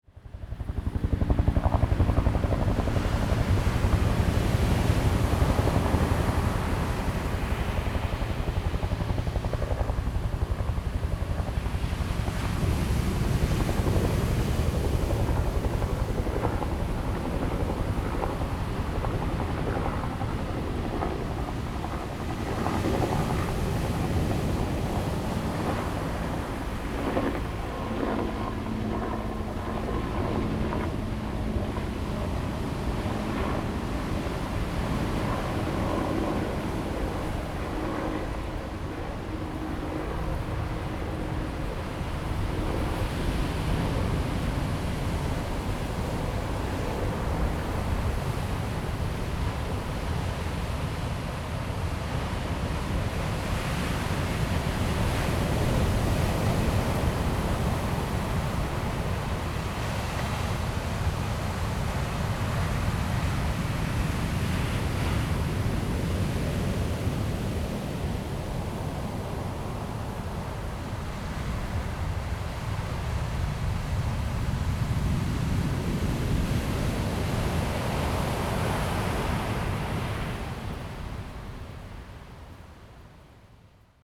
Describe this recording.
sound of the waves, Great wind and waves, sound of the Helicopter through, Zoom H2n MS+XY